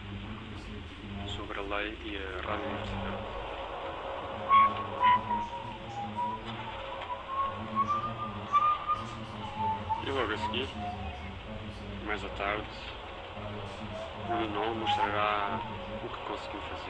radialx on FM radio intersects with live living room studio ambience